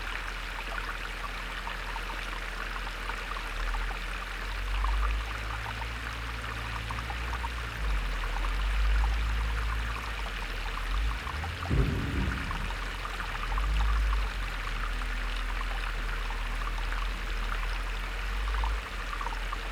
Water needs to be constantly pumped from the ground around the mine area to prevent flooding. Along this road there is a pump every 100 meters or so.
Weißwasser/Oberlausitz, Germany, 18 October 2016, 17:02